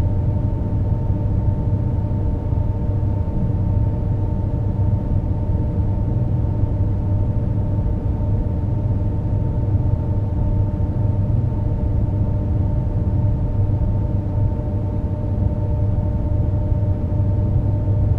Quai Saint-Pierre, Toulouse, France - hydroelectric power station
The EDF Bazacle Complex, hydroelectric power station
7
turbines
Still in use
3000
KW
of installed power capacity
Captation : ZOOMH6 + Microphone AKG C411